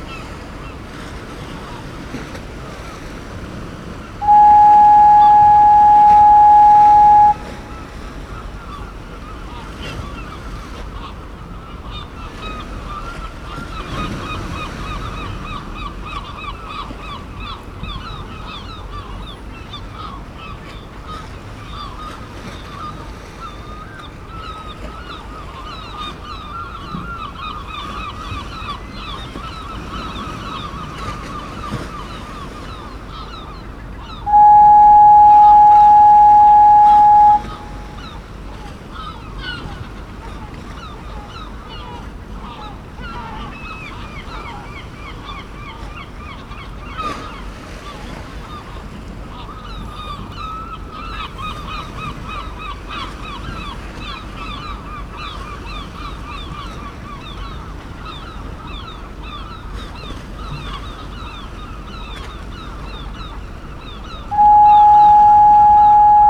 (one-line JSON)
{"title": "Whitby, United Kingdom - Klaxon at the end of East Pier", "date": "2016-04-09 10:12:00", "description": "Klaxon at the end of East Pier ... clear day ... malfunction ..? on test..? waves ... voices ... herring gulls ... boat goes by ... lavalier mics clipped to sandwich box lid ...", "latitude": "54.49", "longitude": "-0.61", "timezone": "Europe/London"}